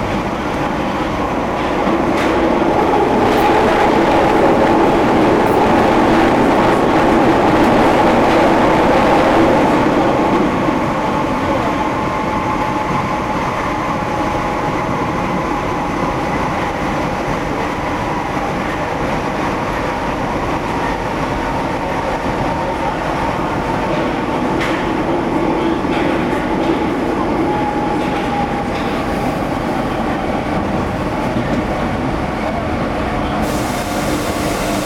Av. Louis Aragon, Villejuif, France - Tube
Waiting in the tube
France métropolitaine, France